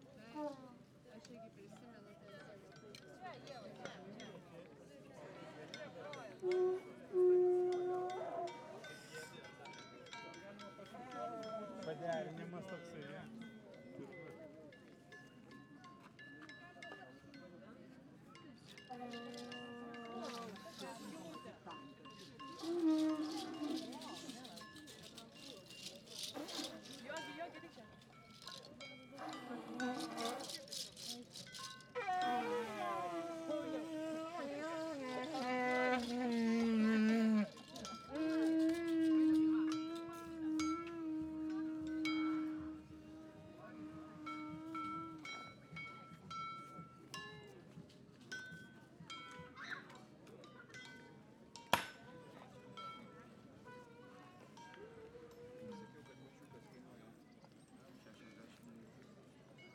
Lithuania, Kernave, Festival of Experimental Archaeology
18th International Festival of Experimental Archaeology „DAYS OF LIVE ARCHAEOLOGY IN KERNAVĖ“, walk through the site